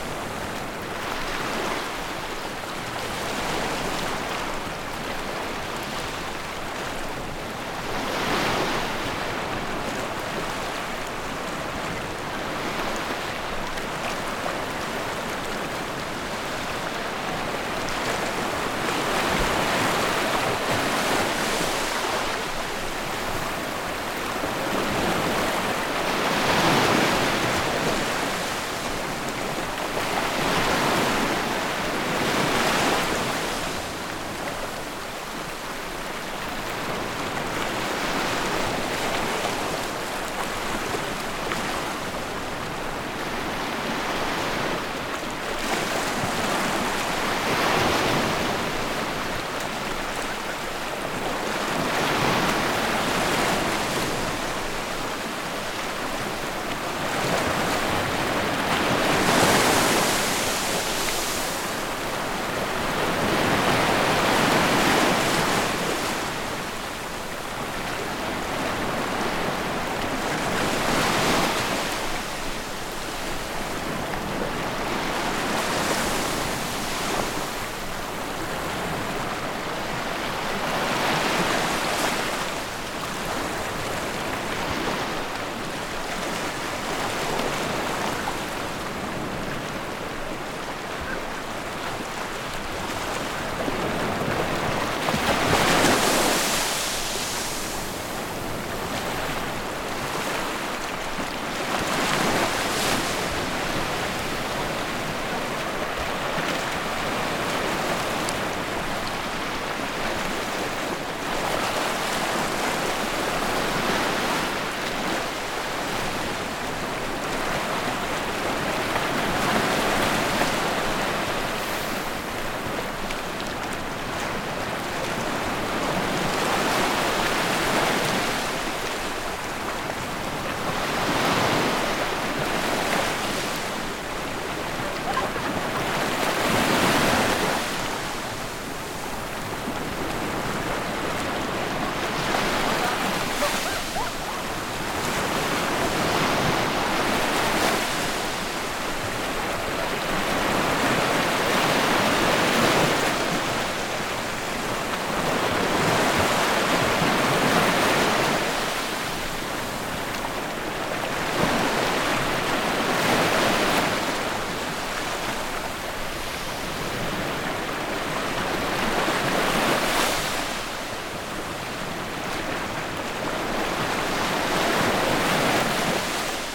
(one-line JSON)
{"title": "Ajaccio, France - Capo Di Feno 03", "date": "2022-07-28 21:00:00", "description": "Beach Sound\nCaptation : ZOOM H6", "latitude": "41.93", "longitude": "8.62", "timezone": "Europe/Paris"}